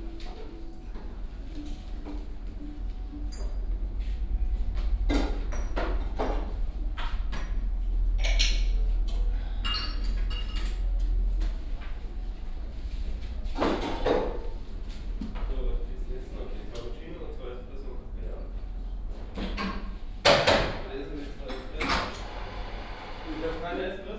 Reuterstr./Pflügerstr., Berlin, Deutschland - afternoon cafe ambience
coffee break at Cafe Goldberg, Berlin Neukölln, ambience inside cafe.
(Sony PCM D50, OKM2)